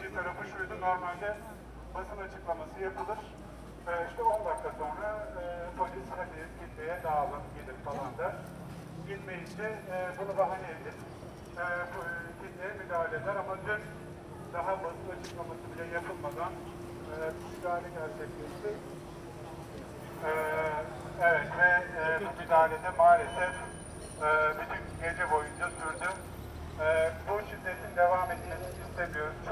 RIOT/Istanbul Feruza cafe
People are showing their indignation about the police brutality during the Gazi park event, several people are still into coma due to abuse of violence.
Firuzağa Cami Sokak, Beyoğlu/Istanbul Province, Turkey, August 2013